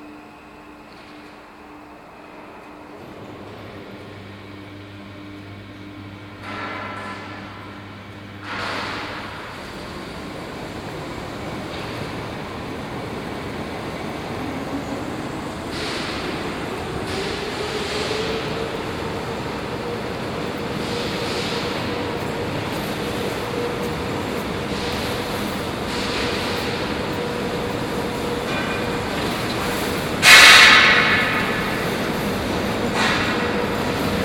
hardware wholesale U Pergamonky
one of the last wholesale iron factory hall in Prague.